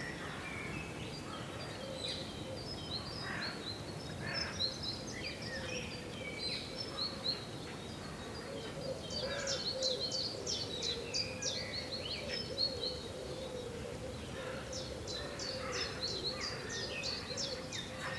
Rue Keyenbempt, Uccle, Belgique - finally peace 2